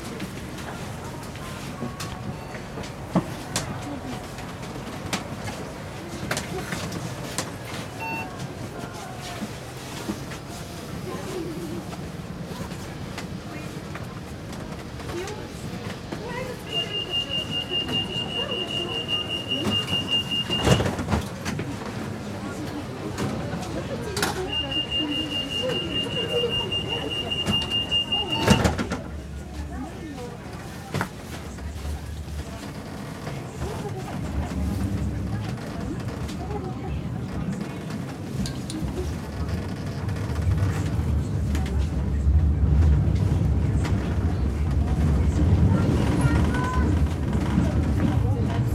Modern Tram.
Tech Note : Olympus LS5 internal microphones.
25 May 2022, 13:42